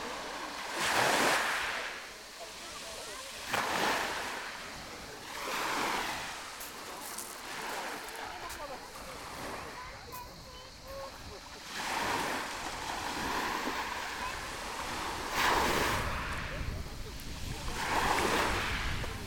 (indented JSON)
{
  "title": "Ulupınar Mahallesi, Çıralı Yolu, Kemer/Antalya, Turkey - Walking to the shore",
  "date": "2017-07-25 17:20:00",
  "description": "walking to the sea shore in the afternoon, sounds of waves, stones and people",
  "latitude": "36.40",
  "longitude": "30.48",
  "altitude": "6",
  "timezone": "Europe/Istanbul"
}